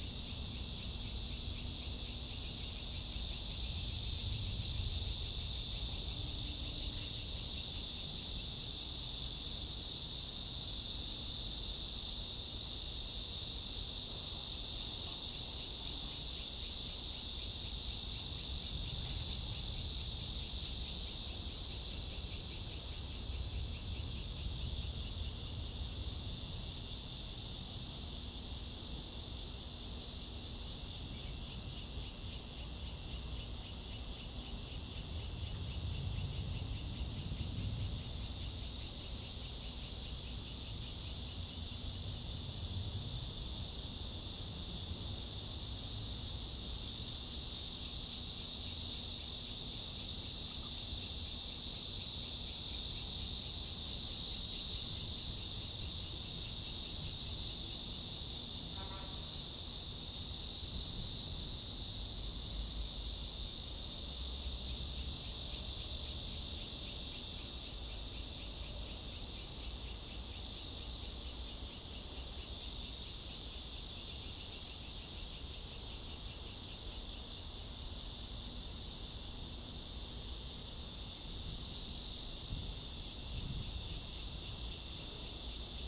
Dayuan Dist., Taoyuan City - Next to the MRT
Near the airport, traffic sound, Cicada cry, MRT train passes, The plane took off
Zoom H2n MS+XY
Taoyuan City, Taiwan, 26 July 2017